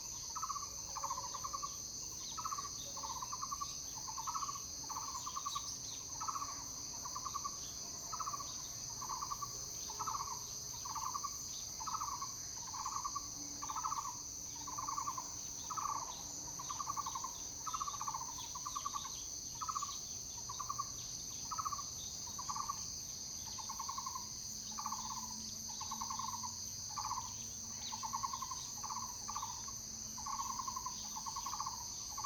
{"title": "橫山鄉沙坑農路, Hsinchu County - a variety of birds sound", "date": "2017-09-12 07:38:00", "description": "Morning in the mountains, forest, a variety of birds sound, Zoom H2n MS+XY", "latitude": "24.75", "longitude": "121.16", "altitude": "201", "timezone": "Asia/Taipei"}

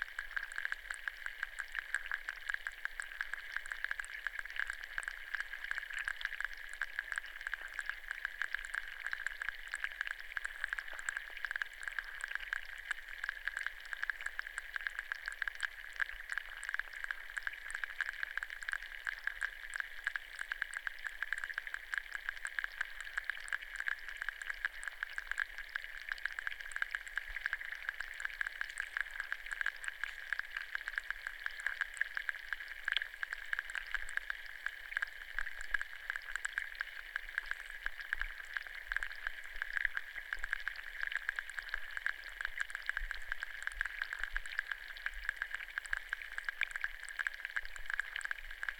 {"title": "Salos, Lithuania, hydrophone", "date": "2018-09-09 17:20:00", "description": "hydrophone in the lake", "latitude": "55.81", "longitude": "25.38", "altitude": "92", "timezone": "Europe/Vilnius"}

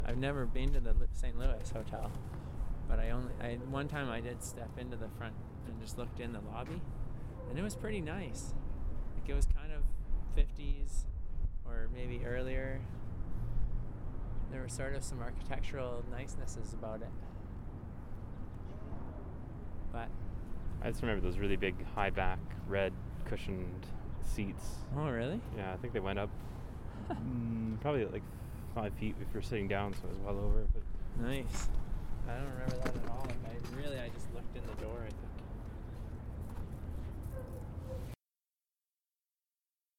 {"title": "East Village, Calgary, AB, Canada - St. Louis", "date": "2012-03-07 22:35:00", "description": "“This is my Village” explores narratives associated with sites and processes of uneven spatial development in the East Village and environs. The recorded conversations consider the historical and future potential of the site, in relation to the larger development of the East Village in the city.", "latitude": "51.05", "longitude": "-114.05", "altitude": "1044", "timezone": "America/Edmonton"}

